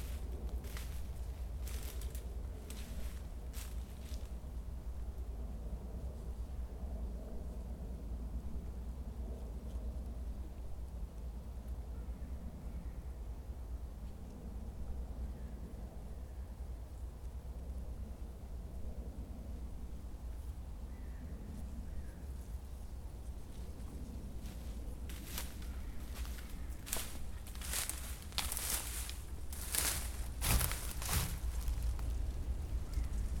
Temse, Belgium - Op Adem
A soundwalk by Jelle Van Nuffel from downtown Temse to Wildfordkaai Temse (Belgium)